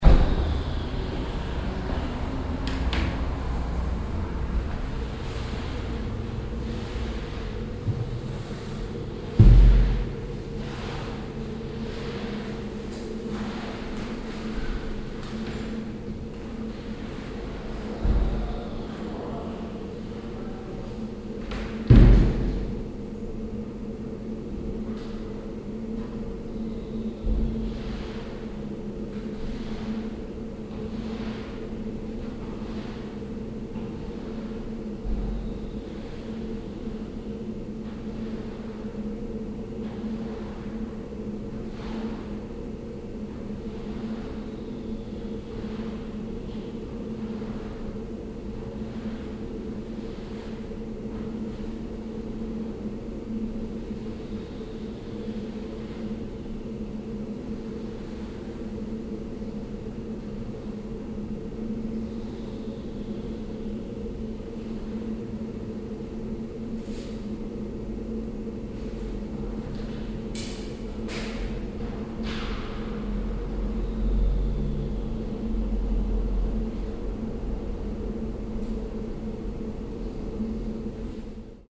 cologne, south, st. severin, eingangsraum
soundmap: cologne/ nrw
eingangsbereich mit marienstatue der katholischen kirche st. severin, morgens
project: social ambiences/ listen to the people - in & outdoor nearfield recordings